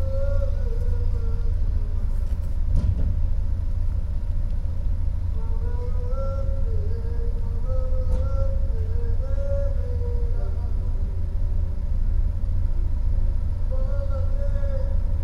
Magnetic Resonance Centre and Gainsborough Grove, Newcastle upon Tyne, UK - Gainsborough Grove
Two men singing in Newcastle University grounds, taxi waiting on street then drives off. Recorded on Sony PCM-M10 out of window of terraced house on Gainsborough Grove. Around midnight.
31 March 2016